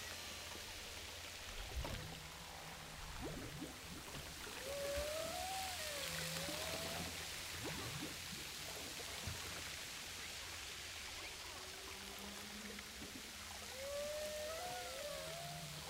Humpback whales off Saint Paul
baleines à bosse au large de saint paul forte houle
9 August 2010, 15:45